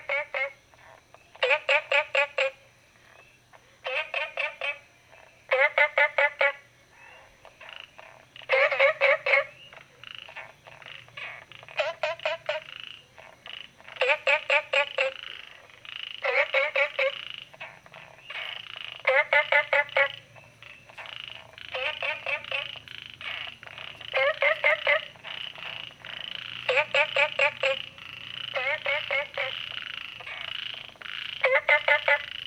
Green House Hostel, Puli Township - Frogs chirping
Frogs chirping
Zoom H2n MS+XY
9 June 2015, ~22:00